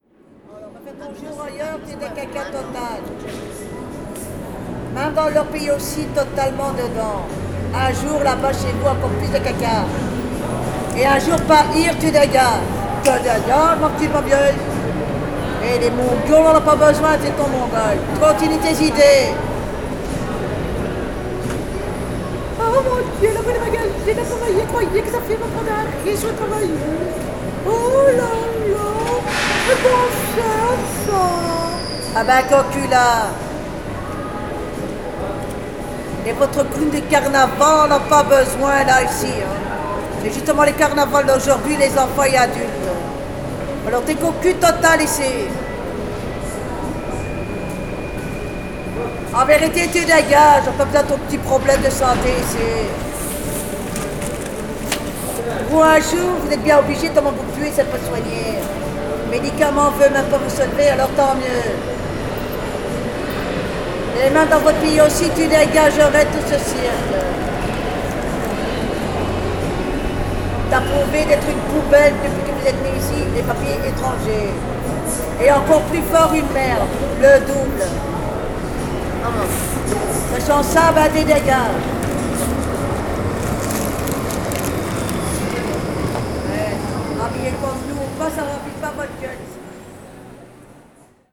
Midi Station, Brussels, a mad woman talking alone.
A woman talking alone in the Midi Station. Une femme parle toute seule dans la Gare du Midi.
Saint-Gilles, Belgium